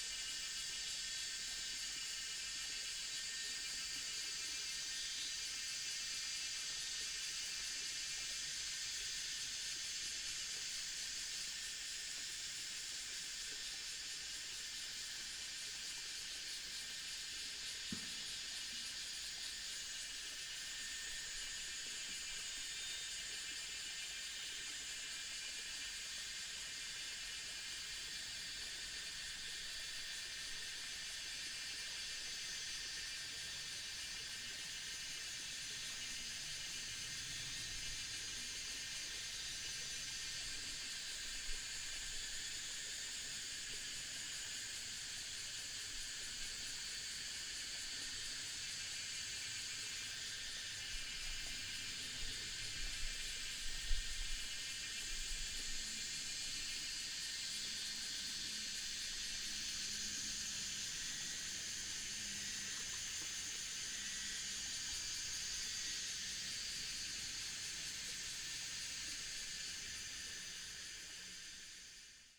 泉源橋, 大溪區承恩路 - On the bridge
Stream sound, Cicada cry, Traffic sound, On the bridge
Daxi District, Taoyuan City, Taiwan, 9 August 2017